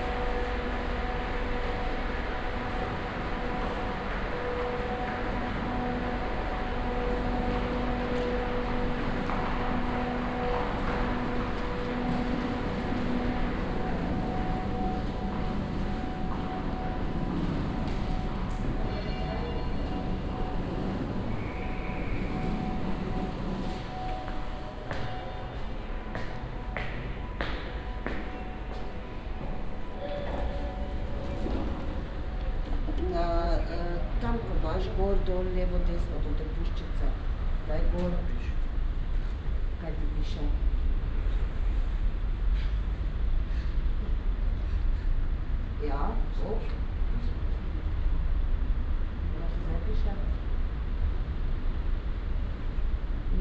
Maribor, station hall
Maribor, Slovenia, main station hall ambience and short walk out, binaural.
Maribor, Slovenia